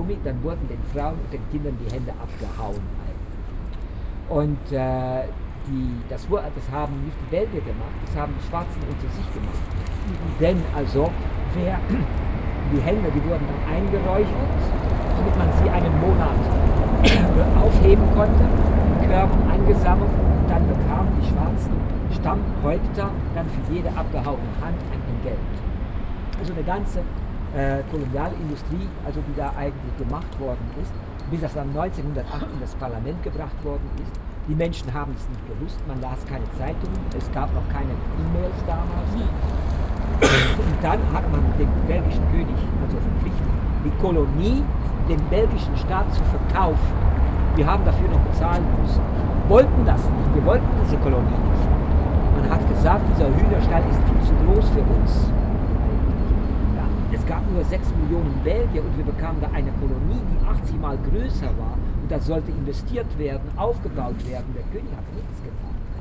Excerpts from a nightly walk through Brussels with Stephaan; a bit of out-door tourism during a study trip on EU migration-/control policy with Iris and Nadine of v.f.h.